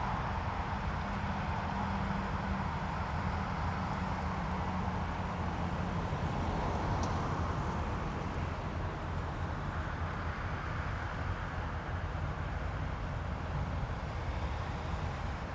Anykščiai, Lithuania, noisy crossroads
traffic in crossroad at the bus station
Utenos apskritis, Lietuva, 1 August